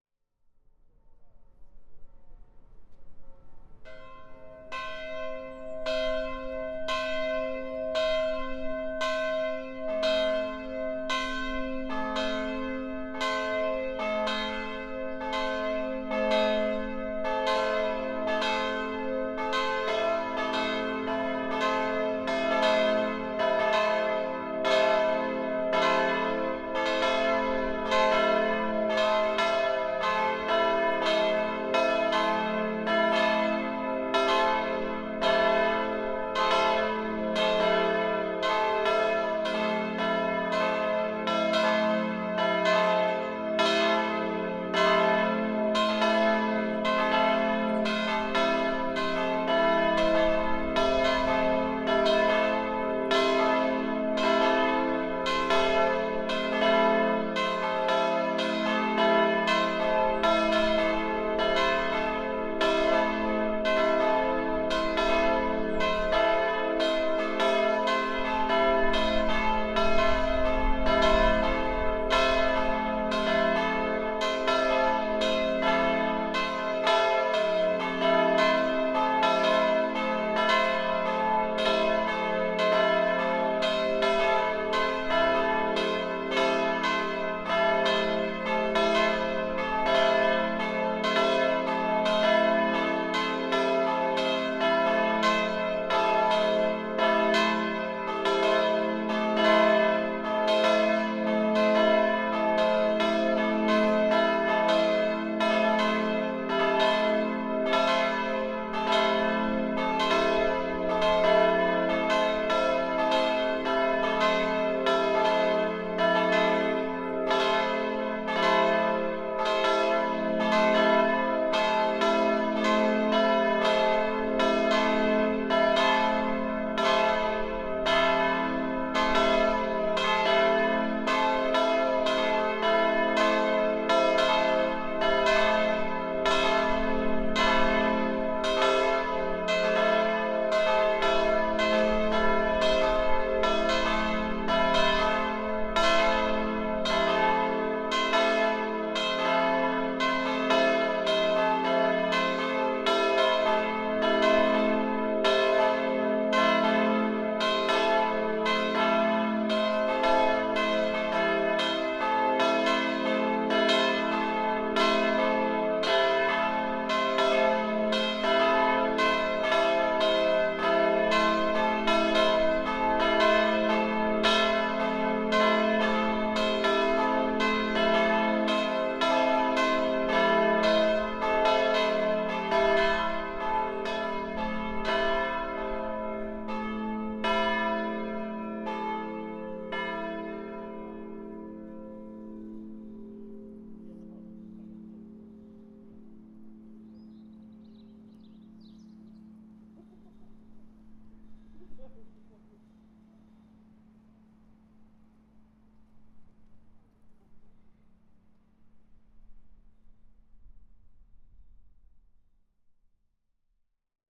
Bells of St. Joseph church, Kraków, Poland - (742) Bells of St. Joseph church
Recording of bells of Saint Joseph church at noon on Easter Monday.
Recorded with Tascam DR100 MK3
województwo małopolskie, Polska, 5 April 2021, 12:00